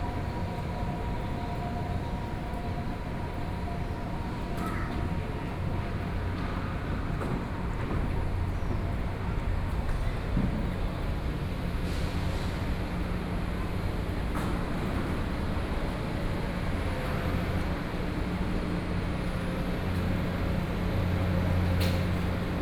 中山區桓安里, Taipei City - Walking in the alley
Walking in the alley, Walking through the market, Road repair and construction site noise
Sony PCM D50+ Soundman OKM II